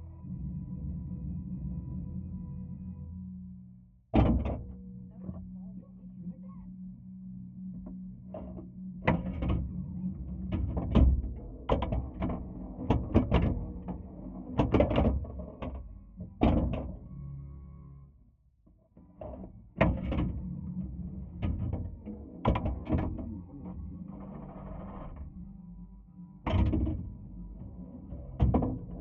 Recorded with a pair of JrF contact mics into a Marantz PMD661
CO, USA, 29 December 2016